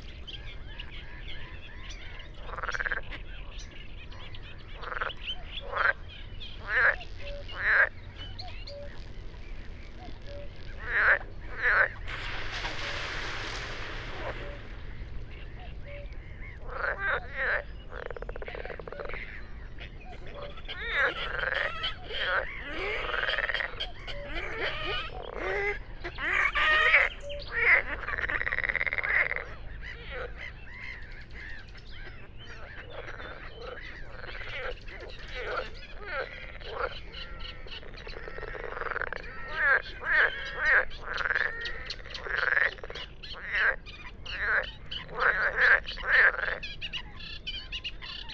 Moorlinse, Kleine Wiltbergstraße, Berlin Buch - evening chorus of water birds and frogs
frogs and birds at Moorlinse pond, Berlin Buch, remarkable call of a Red-necked grebe (Podiceps grisegena, Rothalstaucher) at 3:45, furtherEurasian reed warbler (Acrocephalus scirpaceus Teichrohrsänger) and Great reed warbler(Acrocephalus arundinaceus, Drosselrohrsänger), among others
What sounds like fading is me moving the Telinga dish left and right here and there.
(SD702, Telinga Pro8MK2)
Berlin, Germany, 8 May